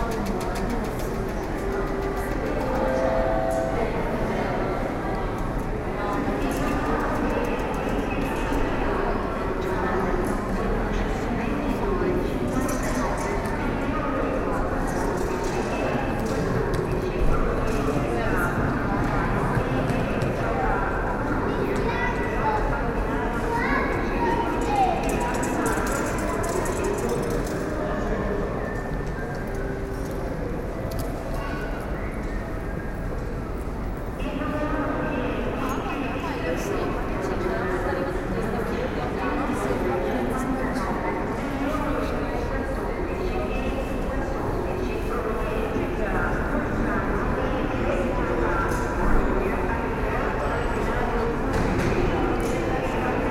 Верона, Италия - Verona Porta Nova. Railway station
Verona Porta Nova. Railway station. Anonsment about trains delay